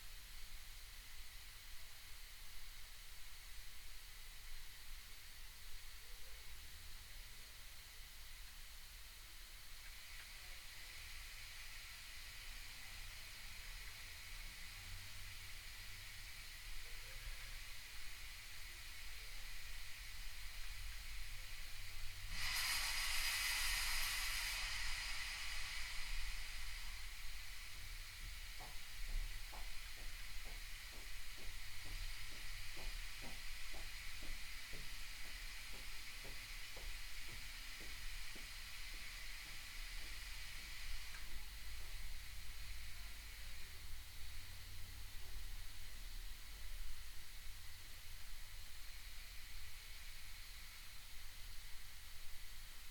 Ave., Seattle, WA, USA - Living History (Underground Tour 6)
Hissing from old pipeworks and valves, still operational. "Bill Speidel's Underground Tour" with tour guide Patti A. Stereo mic (Audio-Technica, AT-822), recorded via Sony MD (MZ-NF810).
12 November 2014, ~12:00